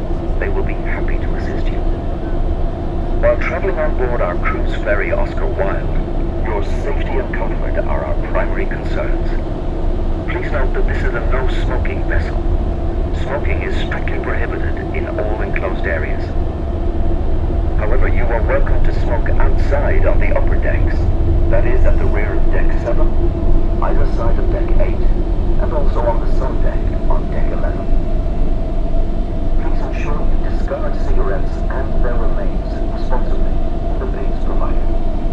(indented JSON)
{
  "title": "Cherbourg, France - The Sunken Hum Broadcast 286 - The Ferry Sounds Like A Ray Bradbury Novel - 13 Oct 2013",
  "date": "2013-10-13 21:45:00",
  "description": "Taking the ferry from Cherbourg in France to Rosslare in Ireland. The voice coming over the pa sounded very like something out of an old 1984-esque sci fi novel",
  "latitude": "49.65",
  "longitude": "-1.62",
  "timezone": "Europe/Paris"
}